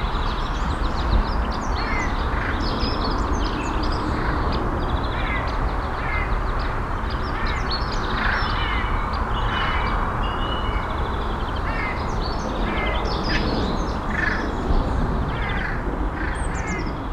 ratingen, frommeskothen, waldfriedhof 01
auf dem friedhofgelände mittags, krähen und andere vögel, gleichmässiges verkehrsschwellen der nahe liegenden autobahn 44, flugzeugüberflug
soundmap nrw
- social ambiences, topographic field recordings